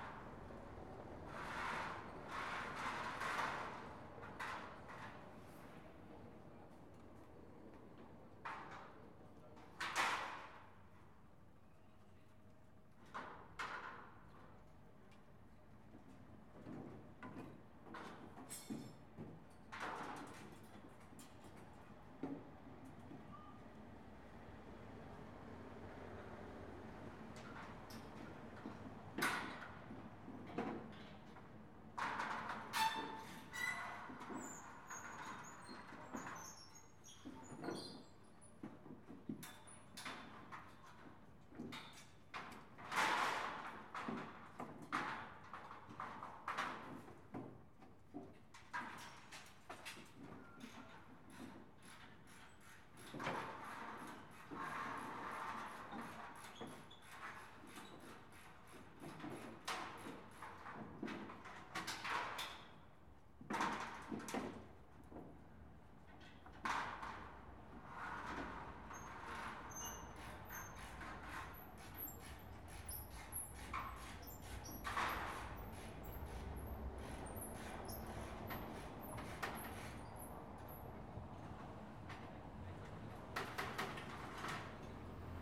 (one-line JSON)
{"title": "Rummelsburg, Berlin, Germany - Taking down scaffolding from building", "date": "2017-03-25 12:10:00", "description": "A beautiful spring day in Lichtenberg. Builders remove some scaffolding that was on a building to enable it to be painted. Recorded with Zoom h4 and wind-protection.", "latitude": "52.51", "longitude": "13.50", "altitude": "38", "timezone": "Europe/Berlin"}